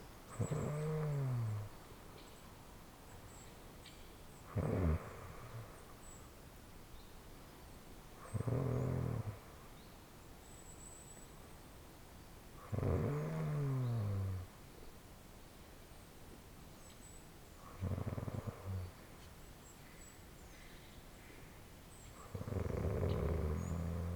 Różana, Różana, Poland - snoring gently
gently snoring in the tent